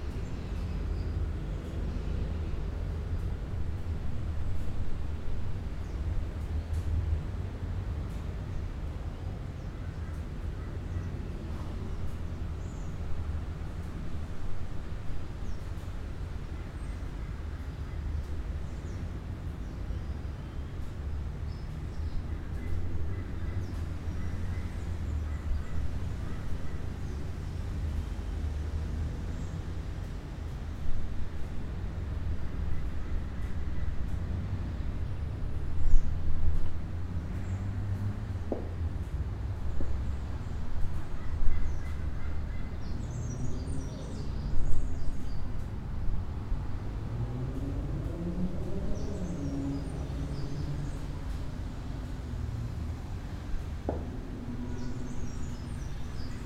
Cra., Medellín, Belén, Medellín, Antioquia, Colombia - Parqueadero
A simple vista parece un simple lugar donde se dejan estacionados los autos, más conocido como “parqueadero”. La verdad tiene un significado mucho más especial, los vehículos suelen ser los frutos del esfuerzo de sus dueños, símbolo de que continuar luchando a pesarde las adversidades tiene un gran valor, tanto es así que merecen un lugar especial donde ser custodiados con los mejores cuidados